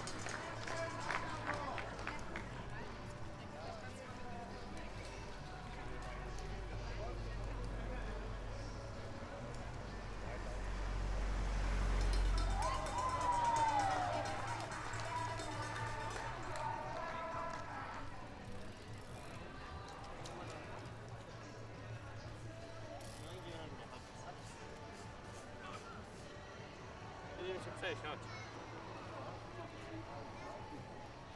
IronMan 2014 Bad Vilbel, Germany - IronMan 2014 - Cheers during bike competition
Germany, Bad Vilbel Sud, Frankfurt Metropolitan area
IronMan 2014 - Bike competition
People cheering with cowbells and rattles
Recorder: Zoom H6
Mic: SGH-6 with windjammer